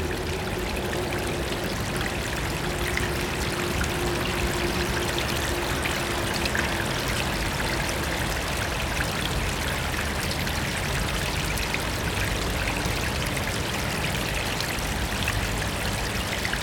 Stawy Jana, Górna, Łódź, Polska - Olechówka river